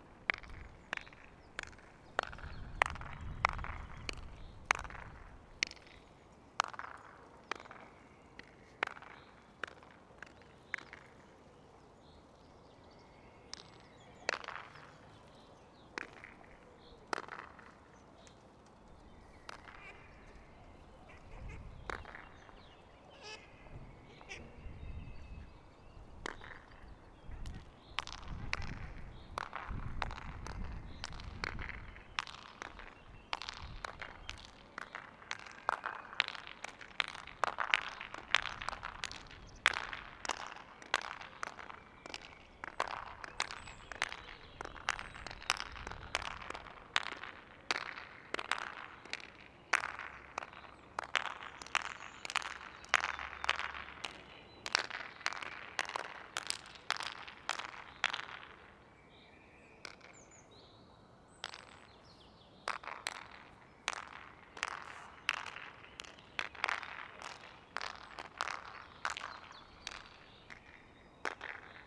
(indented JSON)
{
  "title": "inside the pool, mariborski otok - clapping echos",
  "date": "2017-04-10 16:50:00",
  "description": "flattering echo sensing inside the empty pool, by OR poiesis and unosonic\n(Sony PCM D50)",
  "latitude": "46.57",
  "longitude": "15.61",
  "altitude": "258",
  "timezone": "Europe/Ljubljana"
}